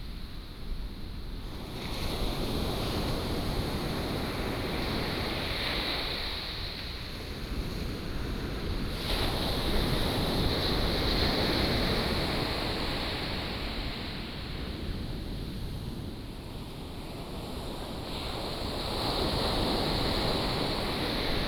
Taitung County, Taiwan - Thunder and waves
Thunder and waves, Sound of the waves